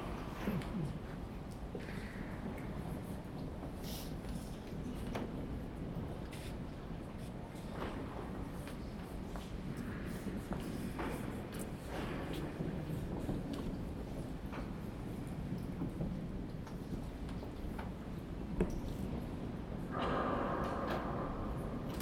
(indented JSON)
{
  "title": "Dom, Altstadt-Nord, Köln, Deutschland - Im Kölner Dom | in the Cologne Cathedral",
  "date": "2013-06-25 19:21:00",
  "description": "im Dom an einem Teelichfeld, ab und an fallen Münzen in einen Opferstock, Teelicher fallen herunter, abgebrannte Lichter werde beräumt und neue aufgestellt, ein Baby quengelt | in the cathedral beside a field of candle lights, sometimes coins falling in a offertory box, candle lights falling down, burndt down lights are put away and set up new, a baby whines",
  "latitude": "50.94",
  "longitude": "6.96",
  "timezone": "Europe/Berlin"
}